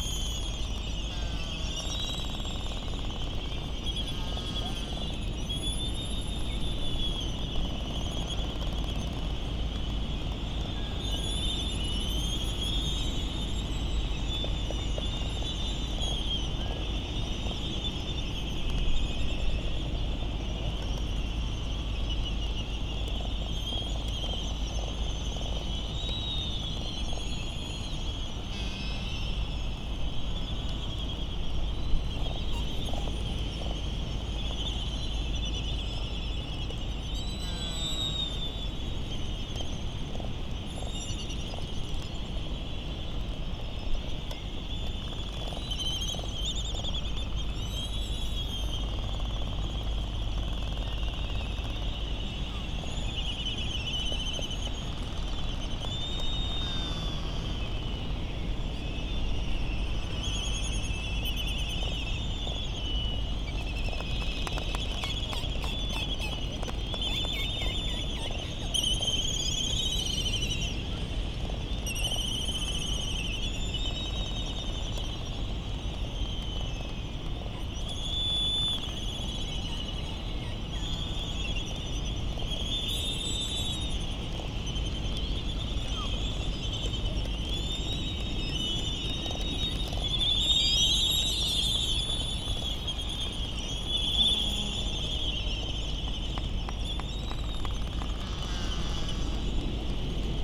United States Minor Outlying Islands - Laysan albatross soundscape ...
Laysan albatross soundscape ... Sand Island ... Midway Atoll ... recorded in the lee of the Battle of Midway National Monument ... open lavalier mics either side of a furry covered table tennis bat used as a baffle ... laysan albatross calls and bill rattling ... very ... very windy ... some windblast and island traffic noise ...